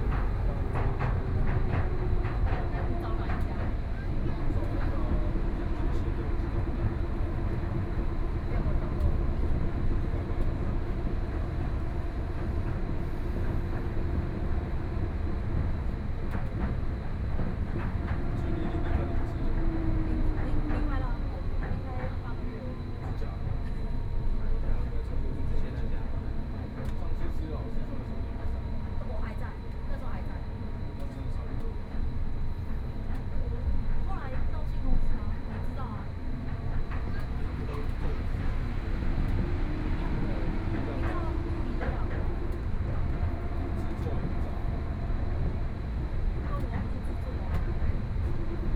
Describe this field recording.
from Gangqian Station to Dazhi Station, Binaural recordings